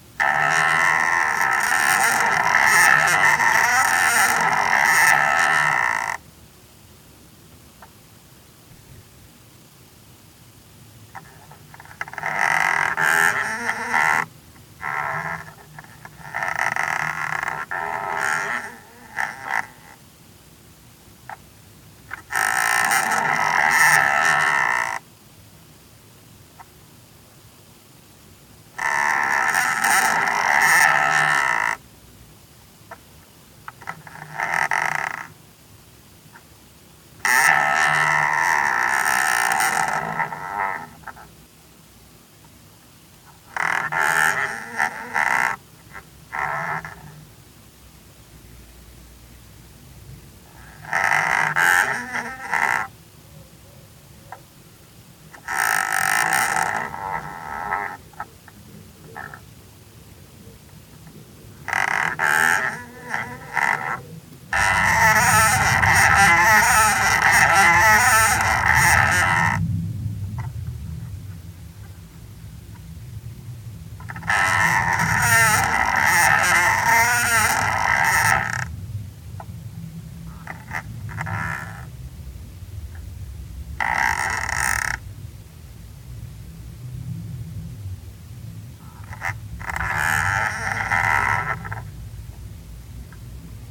A strange water meter. Nobody's using water, but the counter makes roundtrips, a little +1, a little -1, and... +1, -1, +1, -1...... and again again again... This makes curious sounds !